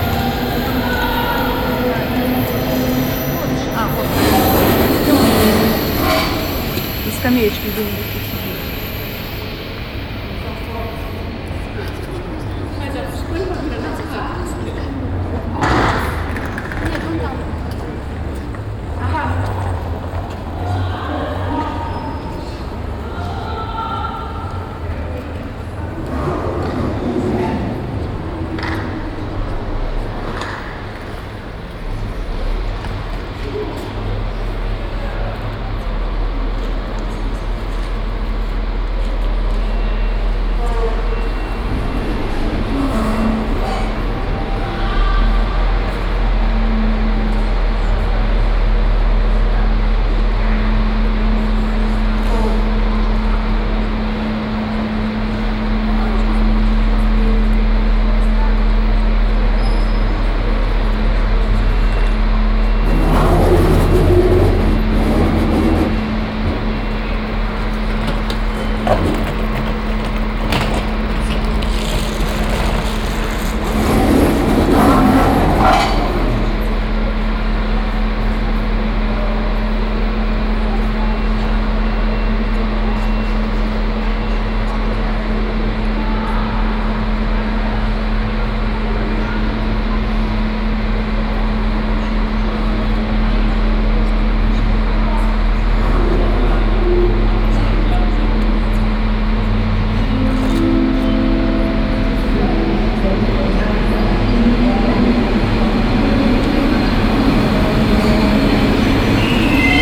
Bahnhofsvorstadt, Bremen, Deutschland - bremen, main station, track 11
At a track at bremen main station. The sound of different trains passing by or entering the station, rolling suitcases passing a metal surface, a queeking elevator door and an announcement.
soundmap d - social ambiences and topographic field recordings